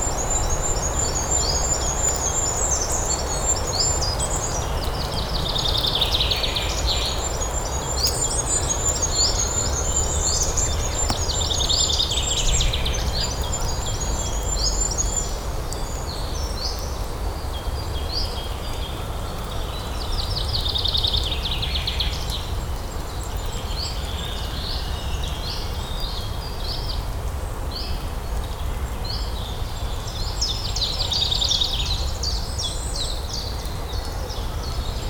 Court-St.-Étienne, Belgique - In the pines
Into the forest, wind sound in the pines needles and birds singing during spring time.
Court-St.-Étienne, Belgium, 17 April 2018